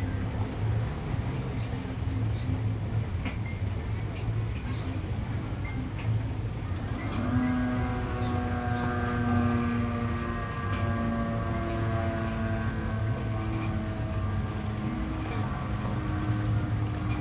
Sitting next to the river bank, Sound wave, The sound of the river, Footsteps
Zoom H2n MS+XY
New Taipei City, Taiwan, 24 August 2015, ~17:00